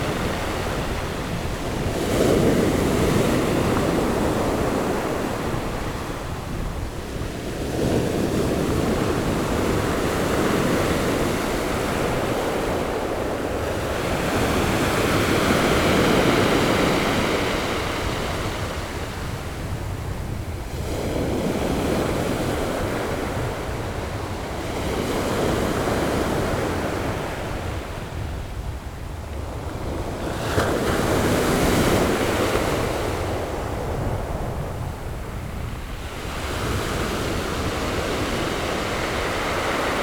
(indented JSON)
{
  "title": "新城村, Xincheng Township - the waves",
  "date": "2014-08-27 11:56:00",
  "description": "Sound of the waves, The weather is very hot\nZoom H6 MS+Rode NT4",
  "latitude": "24.12",
  "longitude": "121.66",
  "altitude": "8",
  "timezone": "Asia/Taipei"
}